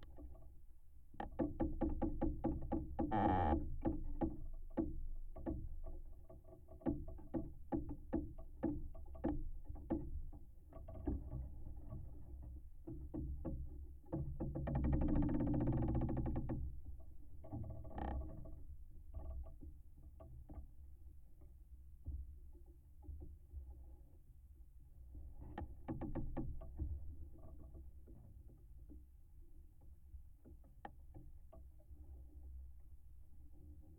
Vyzuonos, Lithuania, moaning tree
tree in a wind recorded with contact microphones